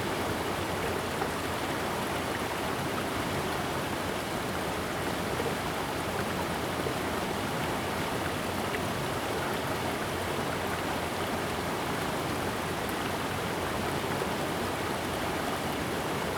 初英親水生態公園, 南華村 - Streams
Streams of sound, Hot weather
Zoom H2n MS+XY